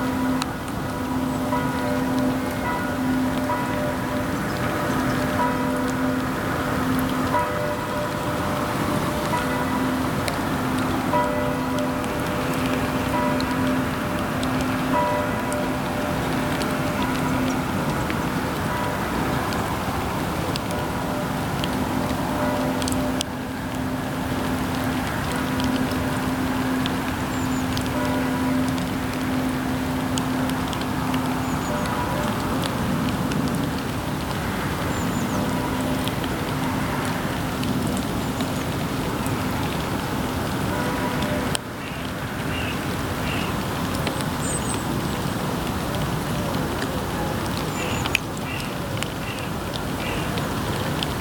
{"title": "Chemin du Signal, Bernex, Suisse - Signal Bernex", "date": "2020-12-20 09:15:00", "description": "Au sommet du Signal de Bernex par temps de brouillard. On entend les oiseux, les cloches de l'église, l'autoroute A1 au loin. Il pleut un peu et le gouttes tombent sur l'enregistreur\nrecorder Zoom H2n", "latitude": "46.17", "longitude": "6.07", "altitude": "496", "timezone": "Europe/Zurich"}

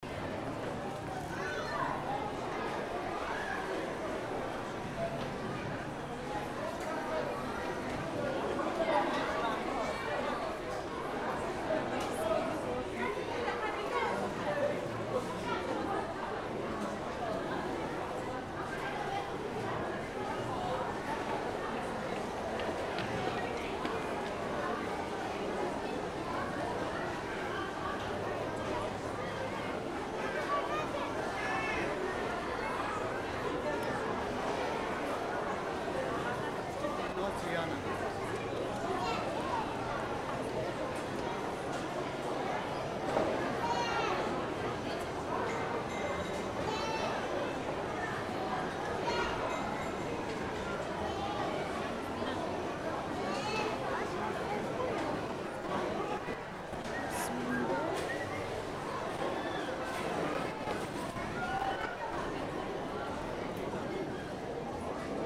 {"title": "National amusement park, Ulaanbaatar, Mongolei - food court", "date": "2013-06-01 15:29:00", "description": "at the opening day, quite busy", "latitude": "47.91", "longitude": "106.92", "altitude": "1292", "timezone": "Asia/Ulaanbaatar"}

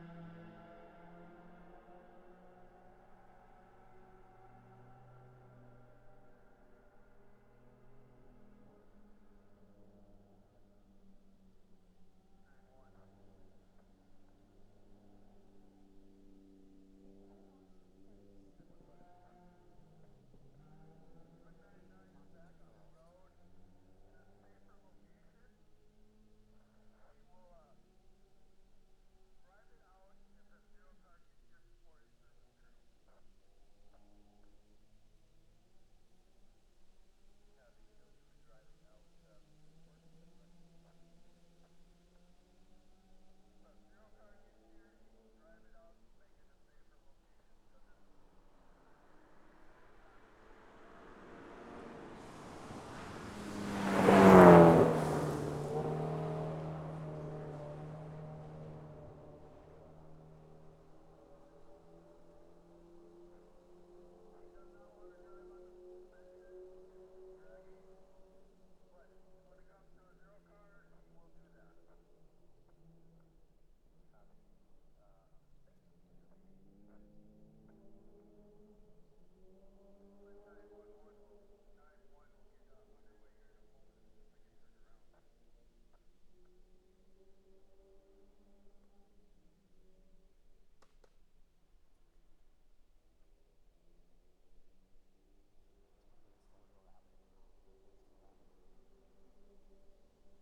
{"title": "County Memorial Forest - Ojibwe Forest Rally Stage 11", "date": "2022-08-20 12:19:00", "description": "The sounds of rally cars passing our marshal location for the Ojibwe Forest Rally", "latitude": "47.18", "longitude": "-95.31", "altitude": "549", "timezone": "America/Chicago"}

